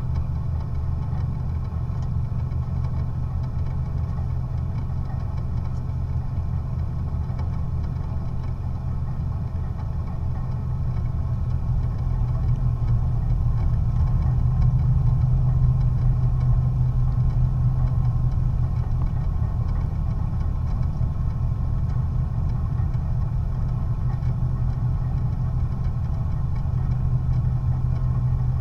Boat crossing 8900 Vila Real de Santo António, Portugal - Boat crossing to Ayamonte
Boat crossing from Vila Real de Santo António to Ayamonte. 3 piezos attached to the outdoor seats and metal top rail of the boat, capturing the motor and resonance of the boat. Recorded into a SD mixpre6, Mixed in post to stereo.